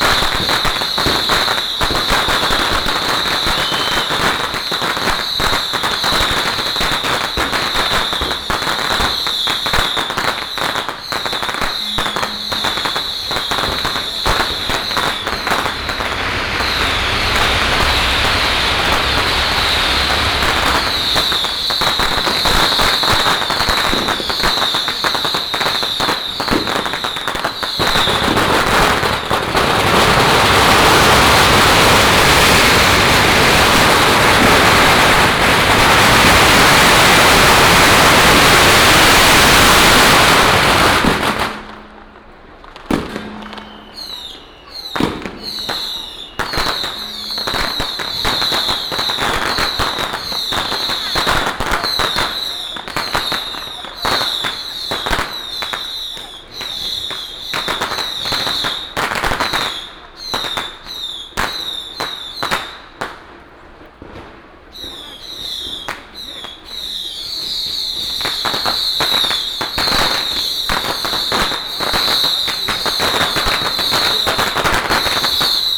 Matsu Pilgrimage Procession, Crowded crowd, Fireworks and firecrackers sound
Miaoli County, Tongxiao Township, 9 March 2017, 12:50